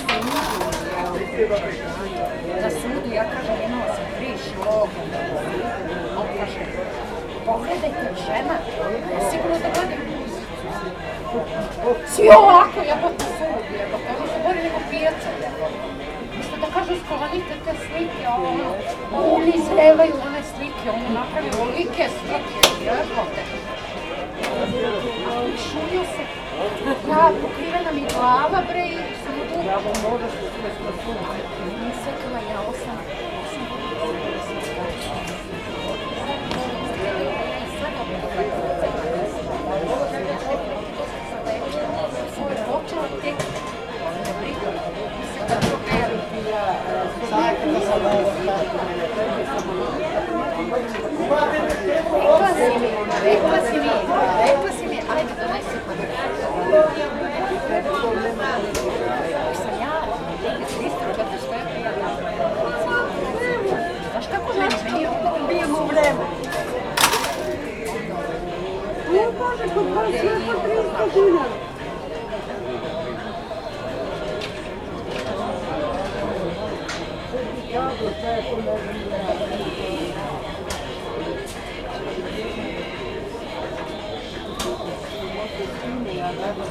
Kalenic Market, Belgrade, Serbia - kalenic pijaca
'after sales gatherings': chit-chats around chess and jelen
30 August 2013, 18:03